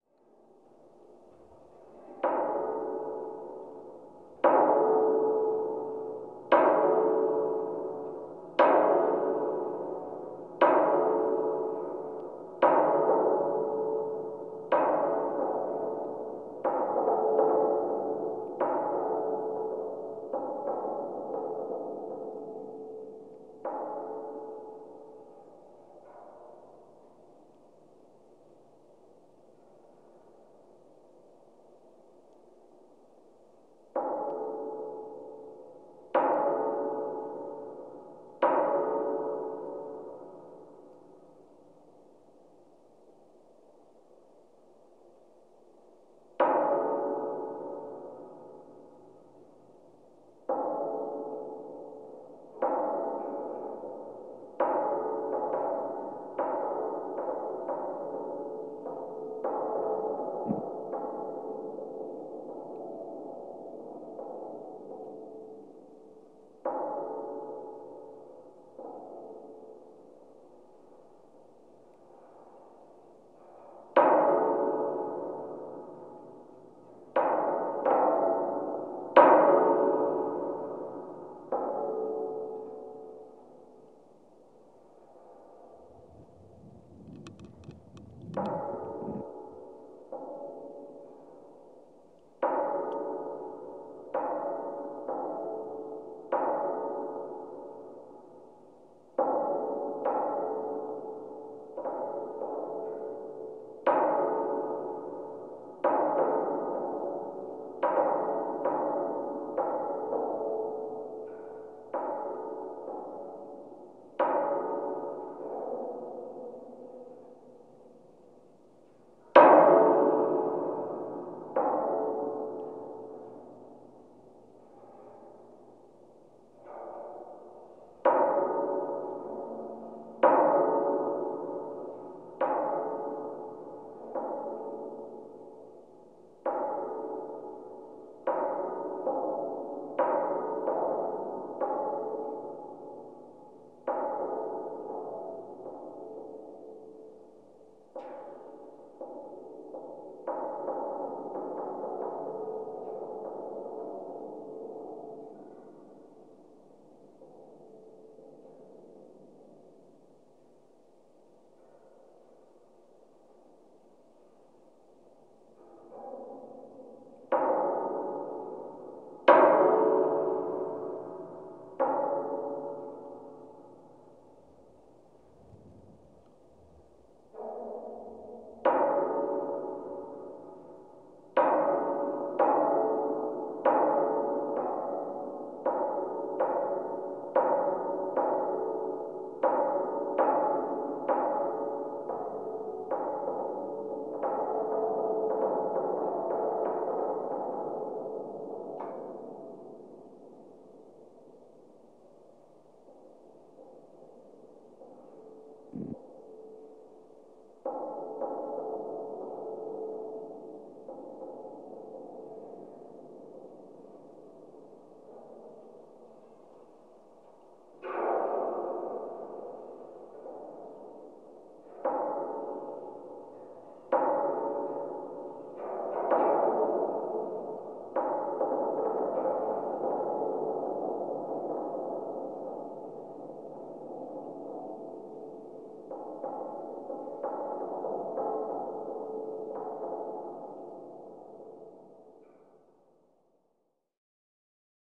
contact microphones on abandoned watertower. prbably some branch touches it making sounds
Grybeliai, Lithuania, 24 February 2018, 14:10